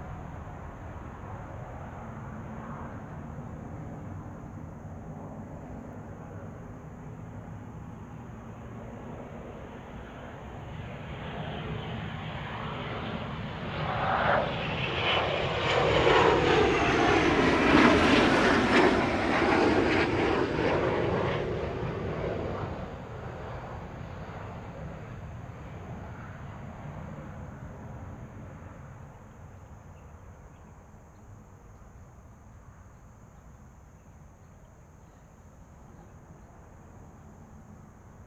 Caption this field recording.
Birds singing, Fighter flight traveling through, The distant sound of traffic, Zoom H6 M/S